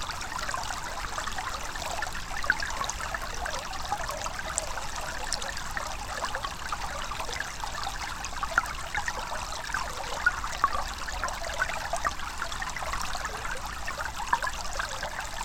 Vyzuonos, Lithuania, streamlet
small streamlet near river Sventoji. recorded with a pair of omni mics and hydrophone
Utenos apskritis, Lietuva, 4 October 2020, 17:10